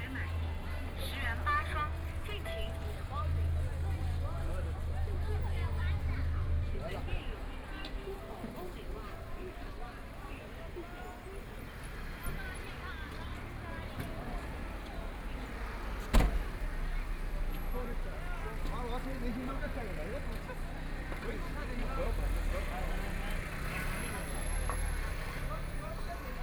Fu You Lu, Shanghai City - walking in the Street
Very large number of tourists, Walking through a variety of shops, Traffic Sound, Binaural recording, Zoom H6+ Soundman OKM II